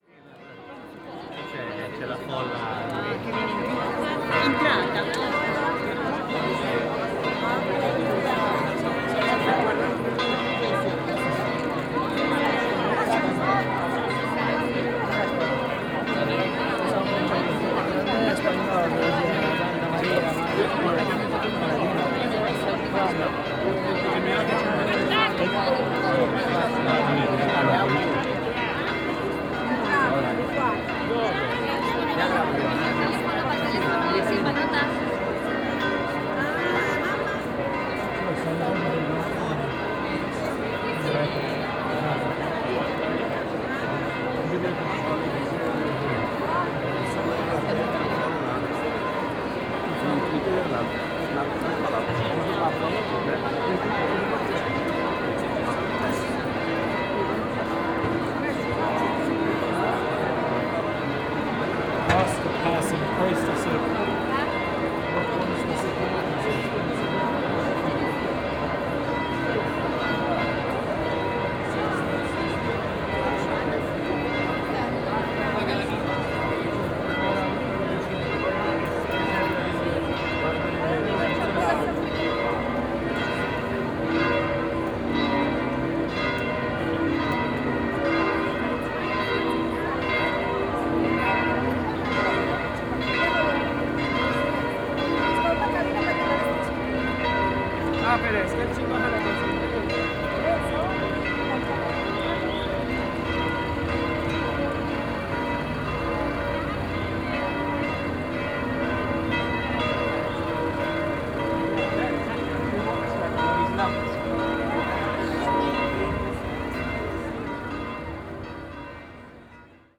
(binaural) while wandering around Vatican area i was swarmed by crowd of all nationalities, leaving the St. Peter's Square. bells were ringing intensely all over the city, echoes coming from all directions.
Rome, Italy